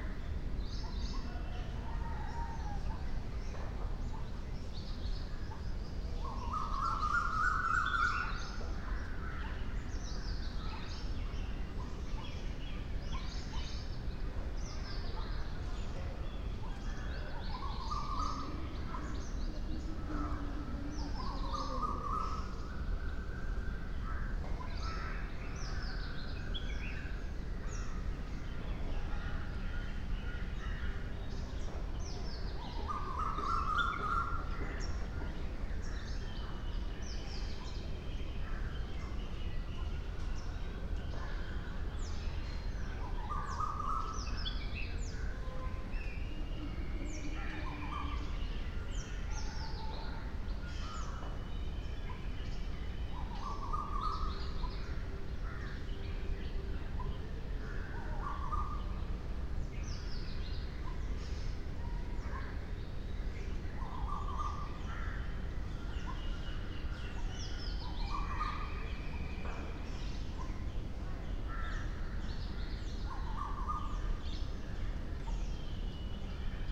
{"date": "2022-02-26 08:30:00", "description": "08:30 Film and Television Institute, Pune, India - back garden ambience\noperating artist: Sukanta Majumdar", "latitude": "18.51", "longitude": "73.83", "altitude": "596", "timezone": "Asia/Kolkata"}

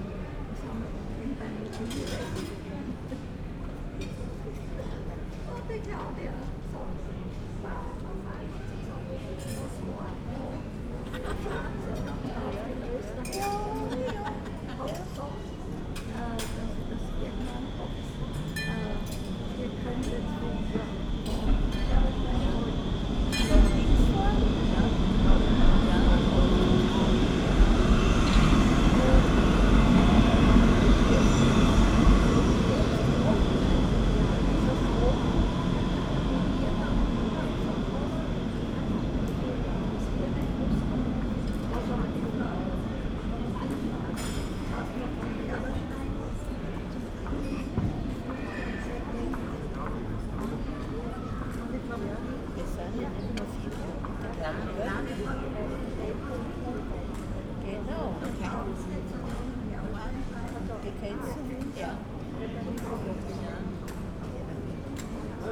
{"title": "Sackstr./Hauptplatz, Graz, Austria - sunday afternoon street cafe ambience", "date": "2012-09-02 15:30:00", "description": "street cafe ambience in narrow street, tram passing very close, 3 older ladies chatting\n(PCM D-50, DPA4060)", "latitude": "47.07", "longitude": "15.44", "altitude": "365", "timezone": "Europe/Vienna"}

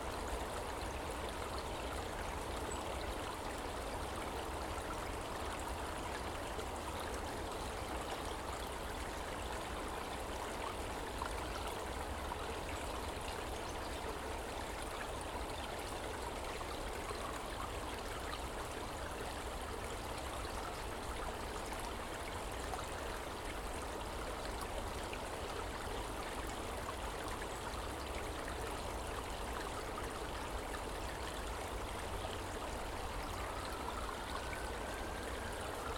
{"title": "Valley Garden, Highfield, Southampton, UK - 003 Water, birdsong, sirens", "date": "2017-01-03 10:37:00", "description": "Valley Garden, Southampton University. Tascam DR-40", "latitude": "50.93", "longitude": "-1.40", "altitude": "38", "timezone": "Europe/Berlin"}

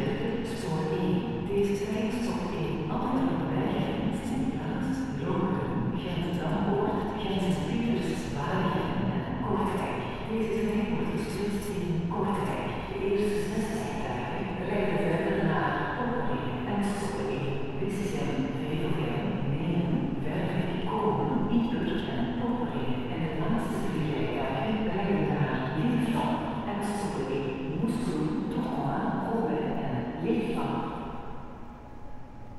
{"title": "Borgerhout, Antwerp, Belgium - Track 23 Antwerp Central Train Station 20170223", "date": "2017-02-23 12:00:00", "description": "Zoom H4n Quadrophonic, stereo onboard XY (front) + 2X external NT5 microphones (rear). Tascam DR-100 stereo onboard AB. Walking around track 23, within the bowels of the Antwerp Central train station.", "latitude": "51.22", "longitude": "4.42", "altitude": "15", "timezone": "Europe/Brussels"}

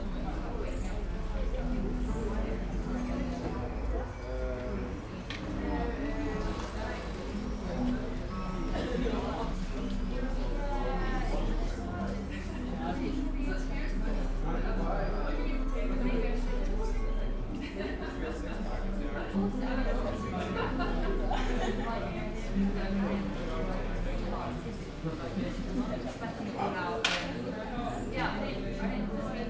{"title": "berlin, mengerzeile: vor kunsthalle - the country & me: in front of kunsthalle m3", "date": "2010-07-03 21:20:00", "description": "too hot summer evening, people sitting in the garden during the opening of lia vaz saleiro´s exhibition \"dawn\"\nthe city, the country & me: july 3, 2010", "latitude": "52.49", "longitude": "13.44", "altitude": "37", "timezone": "Europe/Berlin"}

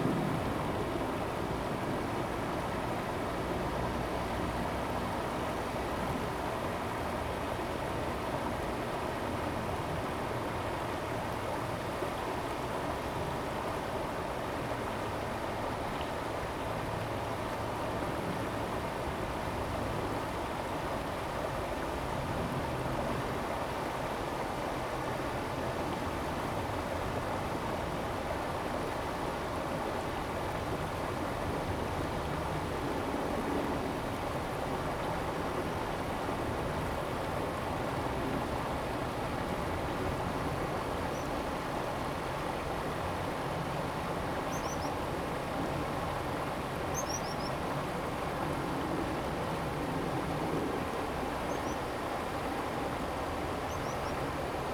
{"title": "Ln., Baozhong Rd., Xinpu Township - stream", "date": "2017-08-17 10:05:00", "description": "stream, Traffic sound\nZoom H2n MS+XY", "latitude": "24.84", "longitude": "121.04", "altitude": "28", "timezone": "Asia/Taipei"}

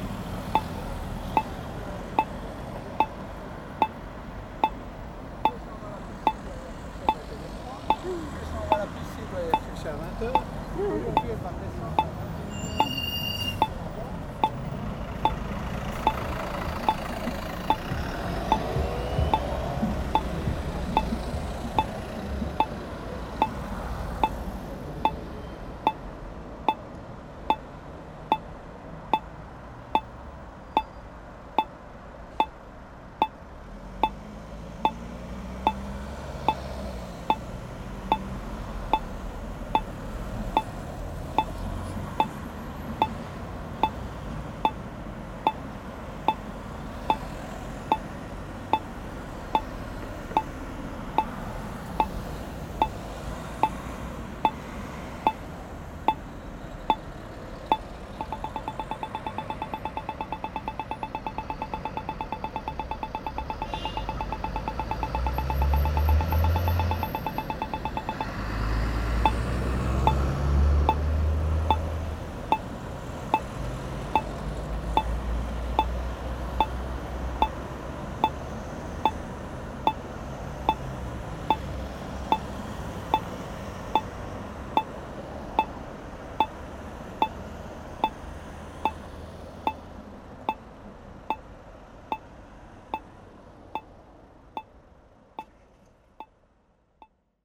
{"title": "Namur, Belgique - Red light", "date": "2018-11-23 19:00:00", "description": "Every evening Namur is very busy, there's traffic jam. On this busy bridge over the Meuse river, recording of a red light allowing people to cross the street.", "latitude": "50.46", "longitude": "4.87", "altitude": "80", "timezone": "Europe/Brussels"}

